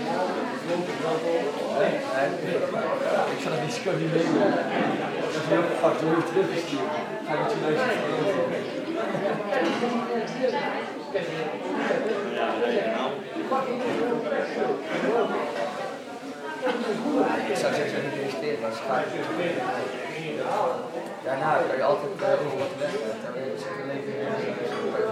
Binnenstad, Eindhoven, Nederland - Eindhoven Wokrestaurant Easy Wok & Go Vestdijk
Vestdijk Eindhoven, wokrestaurant Easy Wok & G, people talk, eat and sizzling wok sounds